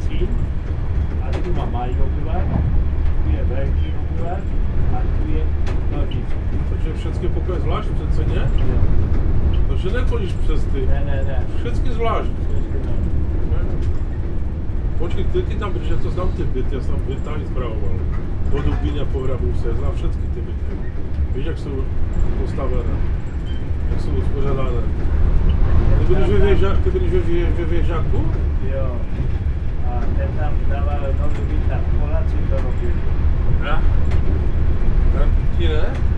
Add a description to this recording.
In Přerov two senior pigeon fanciers hopped on my train and started a whimsical chit-chat about their mutual passion in Silesian dialect. What a wonderful intervention into the bland, airplane-like setting of EC 104 'Sobieski', provided by two truly regional characters, breeding genuine ambassadors of a world without borders